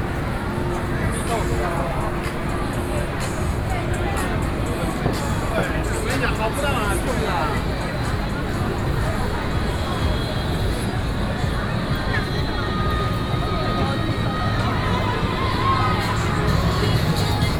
Ximending, Taipei - soundwalk
Walking through the small alleys, The crowd, A wide variety of clothing stores and eateries, Binaural recordings, Sony PCM D50 + Soundman OKM II
Taipei City, Taiwan